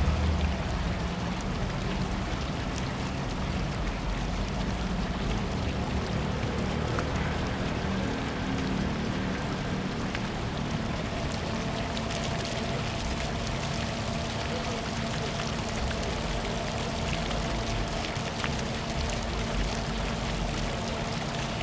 瑠公圳公園, Da'an District, Taipei City - Small fountains
Small fountains, in the Park, Traffic noise
Zoom H2n MS+XY